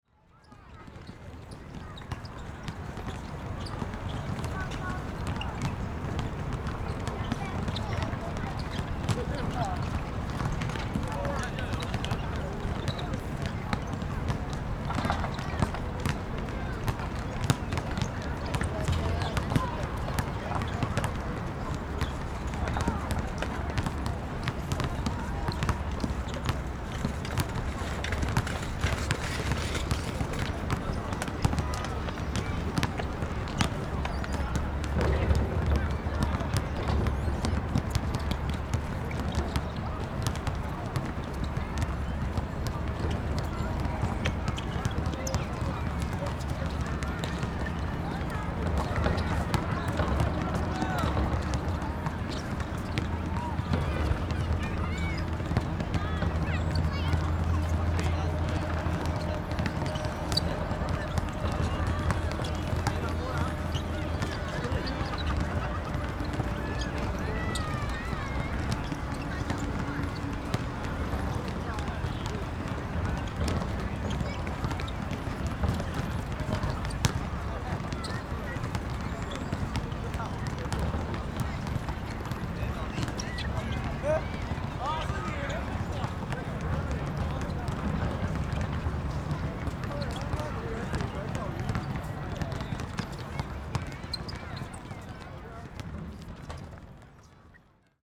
Erchong Floodway, New Taipei City - Play basketball
kids, basketball, Traffic Noise, Rode NT4+Zoom H4n
New Taipei City, Taiwan, 12 February 2012